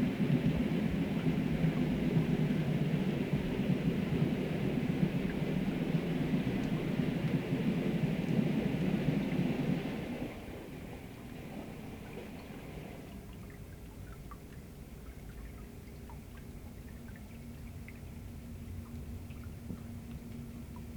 Underwater recording of a tourist boat pottering about the bay, opposite the Opera. Aquarian Audio / Tascam DR40
Oslo, Norway